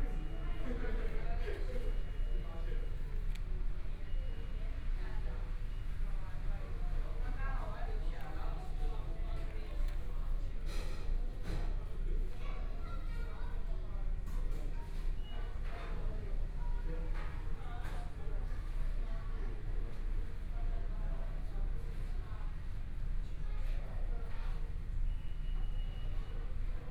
台東市豐榮里 - fast food restaurant
in the McDonald's fast food restaurant, Binaural recordings, Zoom H4n+ Soundman OKM II ( SoundMap20140117- 6)
17 January 2014, 15:28